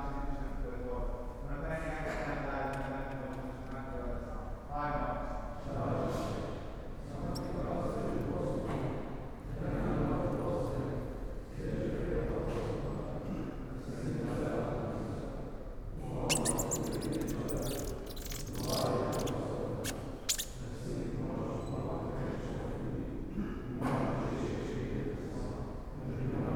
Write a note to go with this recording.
The Romeiros (Pilgrims) is a traditional spiritual ritual during lent in the island of São Miguel, Azores. This group arrived at the church Matriz around 1 pm when I was drinking my coffee in a café nearby. I've immediately grabbed my pocket size Tascam DR-05 and started recording them while they were singing at the church door. Then I followed them inside the where they prayed for a few minutes before departing for a long walk to some other part of the island.